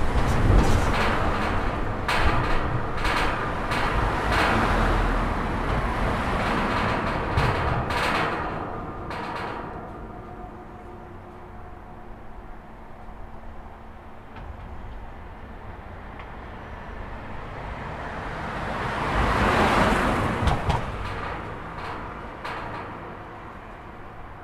Passage of cars on the bridge, recorded from adjacent pedestrian footbridge with Zoom H5+MSH-6
France métropolitaine, France, 7 January 2022